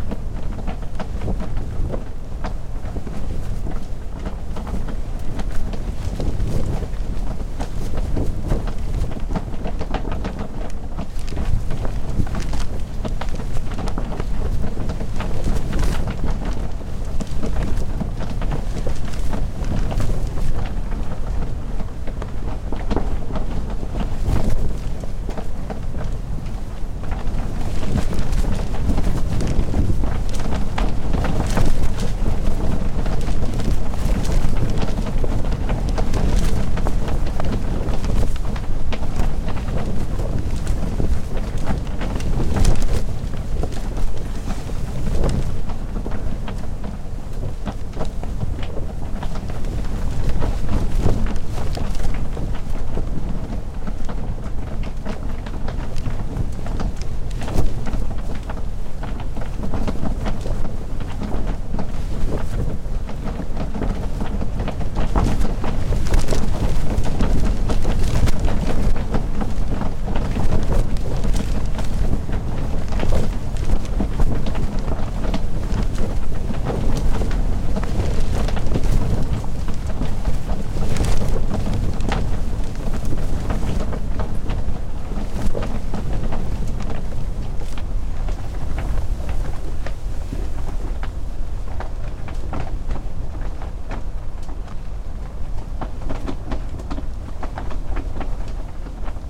Yurt tent X sea wind at dusk
At the highest point on Gapa-do a round tent (Yurt?) has been erected...highly wind exposed without cover of trees...and looks over towards Jeju to the north and south toward Mara-do (Korea's southern most territory)...the tent design showed it's resilience in the relentless sea wind...
Jeju-do, South Korea, 14 December